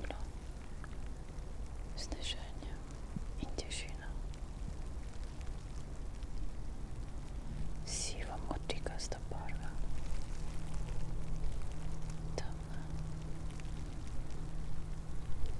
{
  "title": "tree crown poems, Piramida - white",
  "date": "2013-01-24 16:19:00",
  "description": "light snow, spoken words and whisperings, wind, snowflakes ...",
  "latitude": "46.57",
  "longitude": "15.65",
  "altitude": "373",
  "timezone": "Europe/Ljubljana"
}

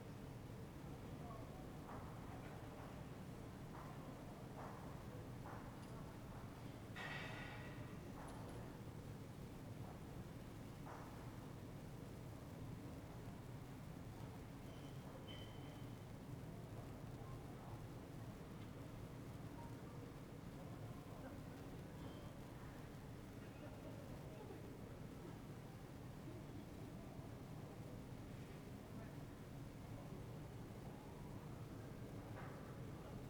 {
  "title": "Ascolto il tuo cuore, città, I listen to your heart, city. Several chapters **SCROLL DOWN FOR ALL RECORDINGS** - Friday afternoon with barkling dog in the time of COVID19 Soundscape",
  "date": "2020-04-24 17:03:00",
  "description": "\"Friday afternoon with barkling dog in the time of COVID19\" Soundscape\nChapter LV of Ascolto il tuo cuore, città. I listen to your heart, city\nFriday April 24th 2020. Fixed position on an internal terrace at San Salvario district Turin, forty five days after emergency disposition due to the epidemic of COVID19.\nStart at 5:03 p.m. end at 5:35 p.m. duration of recording 31’59”",
  "latitude": "45.06",
  "longitude": "7.69",
  "altitude": "245",
  "timezone": "Europe/Rome"
}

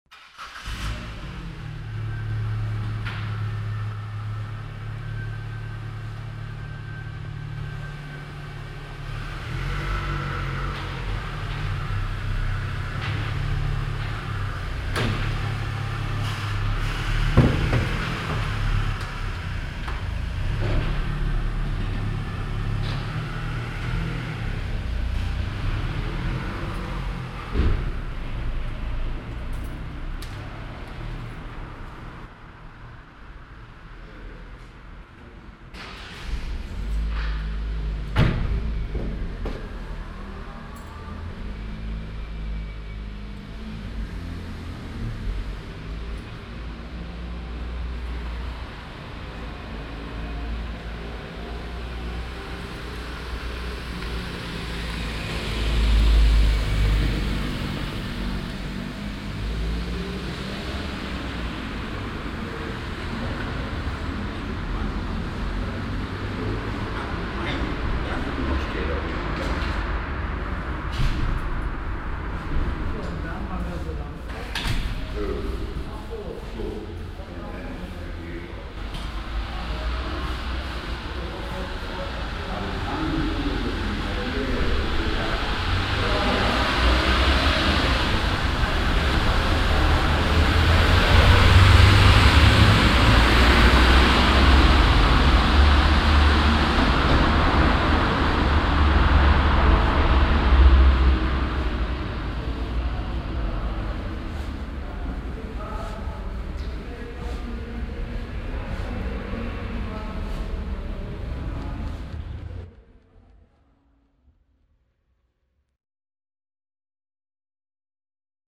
{
  "title": "langenfeld, konrad adenauer platz, tiefgarage",
  "description": "ein und ausfahrende fahrzeuge, gespräch in tiefgarage\nsoundmap nrw/ sound in public spaces - social ambiences - in & outdoor nearfield recordings",
  "latitude": "51.11",
  "longitude": "6.95",
  "altitude": "52",
  "timezone": "GMT+1"
}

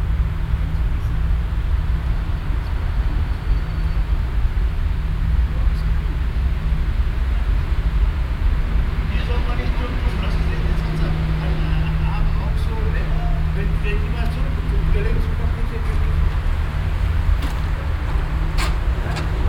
{
  "title": "Düsseldorf, Hofgarten, Weyhe Passage",
  "date": "2008-08-21 10:26:00",
  "description": "Mittags im parkverbindenen Fussgängertunnel, Schritte und durchrollende Fahrradfahrer. Darüber das Rauschen des Strassenverkehrs.\nsoundmap nrw: social ambiences/ listen to the people - in & outdoor nearfield recordings",
  "latitude": "51.23",
  "longitude": "6.78",
  "altitude": "44",
  "timezone": "Europe/Berlin"
}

